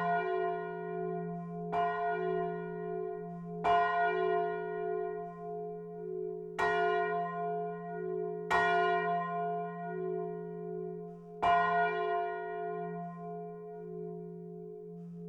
Ctr de l'Église, Mametz, France - église St-Vaast de Mametz - Pas-de-Calais - 12h+Angélus
église St-Vaast de Mametz - Pas-de-Calais
Une seule cloche - 12h+Angélus
"Cette cloche a été fondue le 14 juillet 1862 et bénite solennellement sous l’administration de Messieurs Chartier Prosper maire de la commune de Mametz département du Pas de Calais et Scat Jean-Baptiste Adjoint. Monsieur l’abbé Delton, Amable Jean-Baptiste desservant la paroisse de ladite commune.
Elle a reçu les noms de Félicie Marie Florentine de ses parrain et Marraine Monsieur Prisse Albert Florian Joseph attaché au Ministère des Finances et Madame Chartier Prosper née Félicie Rosamonde Lahure."